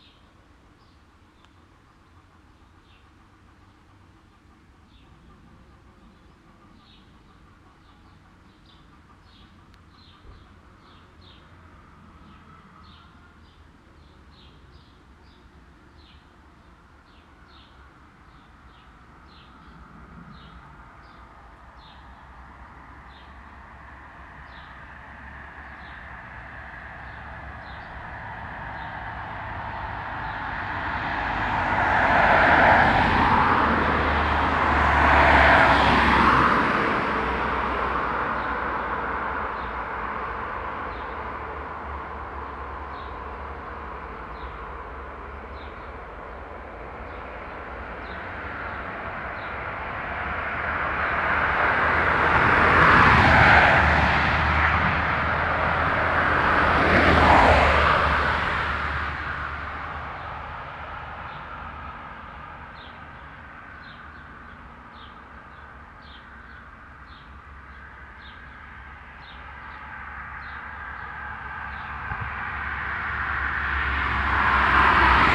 An der Durchfahrtsstraße N17 an einem leicht windigem Sommertag. Die Geräusche vorbeifahrender Fahrzeuge unterbrechen die Stille des Ortes in der neben Vogelstimmen immer wieder das Gluckern von Hühnern zu hören ist.
At the through street N17 during a mild windy summer day. The silence of the village with the sounds of birds and chicken interrupted by the sounds of passing by traffic.
7 August, 13:50